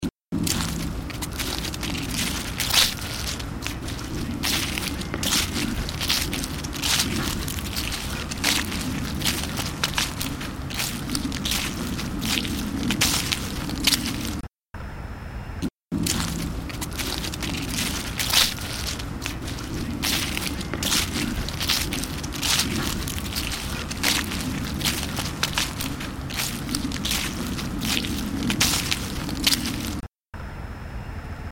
via Ovido, Parabiago, Passeggiata autunnale in via Ovidio
passeggiata autunnale via Ovidio (dicembre 2007)
Parabiago Milan, Italy, 15 December 2007, ~16:00